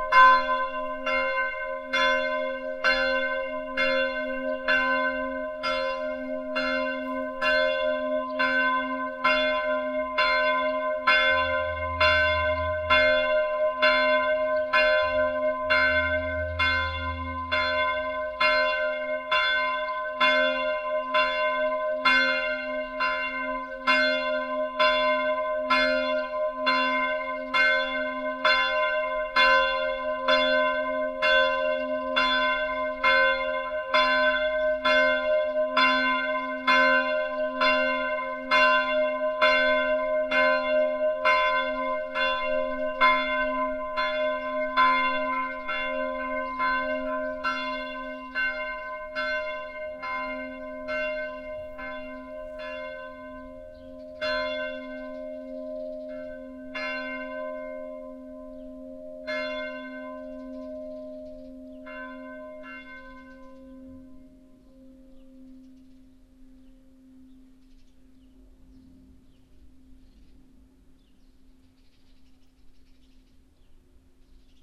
hoscheid, church, bells
Outside the church of Hoscheid nearby the bell tower. The noon bells on a warm but windy summer day.
Hoscheid, Kirche, Glocken
Außerhalb der Kirche von Hoscheid nahe beim Glockenturm. Die Mittagsglocke an einem warmen aber windigen Sommertag.
Hoscheid, église, cloches
Dehors, à proximité du clocher de l’église de Hoscheid. Le carillon de midi, un soir d’été chaud mais venteux.
Project - Klangraum Our - topographic field recordings, sound objects and social ambiences